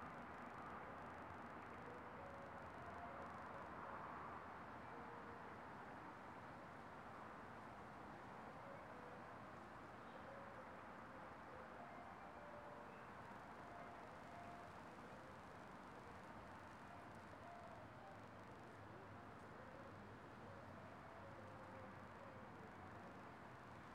Mid-Town Belvedere, Baltimore, MD, USA - wind/tree
October 2016